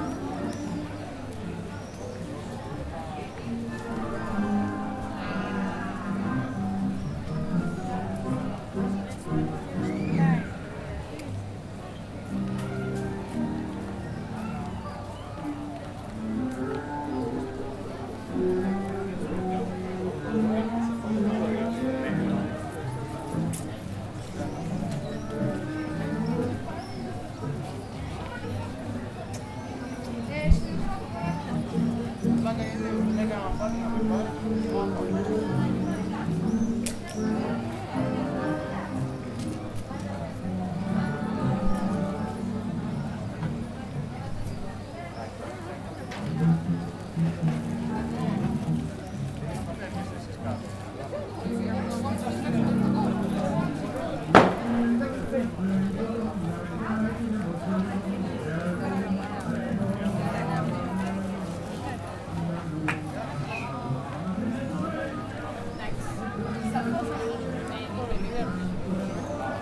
Thessaloniki, Greece - Navarinou pedestrian road
A dance academy did a happening in Navarinou pedestrian road in order to be advertised. Various dancers danced tango etc. A lot of people enjoyed the unexpected event!
Ελλάδα, European Union, 18 July 2013